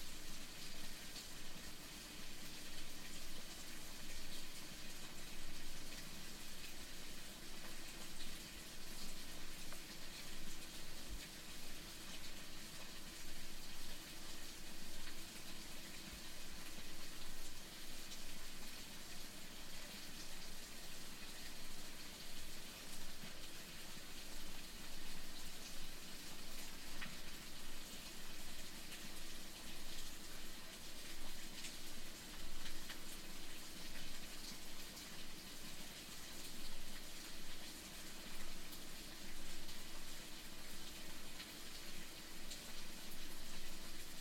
Hooke, Dorset, UK - Hooke Park Wood, stream
Hooke Park is a 350-acre working forest in Dorset, south west England, that is owned and operated by the Architectural Association.
4 November 2013